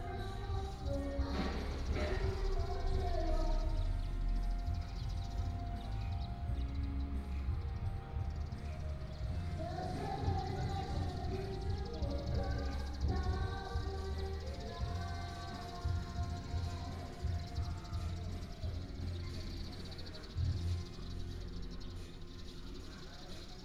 Binmao, Jinfeng Township, Taitung County - Village street corner
Village street corner, traffic sound, Karaoke, Bird cry, Bread vendor
Jinfeng Township, 金崙林道, 1 April 2018, 17:48